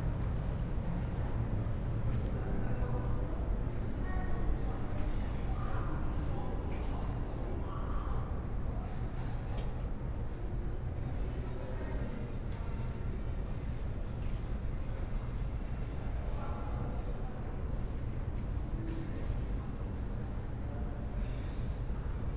Kottbusser Tor, Berlin, Deutschland - soundwalking in the pandemic
Berlin, Kottbusser Tor, walking through the station on different levels. Only a fraction of people are here, compared to normal crowded workdays, trains are almost empty
(Sony PCM D50, Primo EM172)